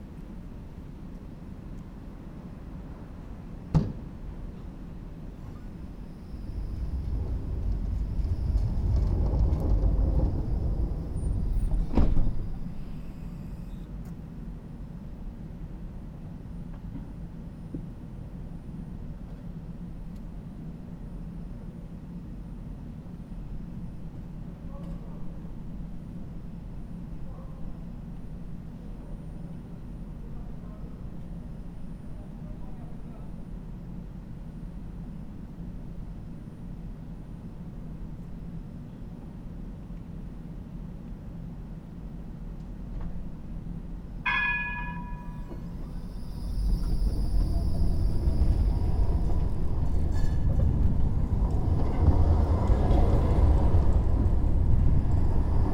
Sint-Jans-Molenbeek, Belgium - Tram manoeuvres at the tram station
This is the sound of a tram being slowly driven out of the tram station, then re-oriented and driven back in along a different set of tracks, to a different bay. This is a beautiful street to hear many sounds of the trams - not only their movement in and out of the station, but also the sounds of cars passing over the tracks that the trams use, creating rhythmic patterns. Recorded with onboard EDIROL R09 microphones, with a little bit of space before the tram approaches, to get a sense of the ambience of the space.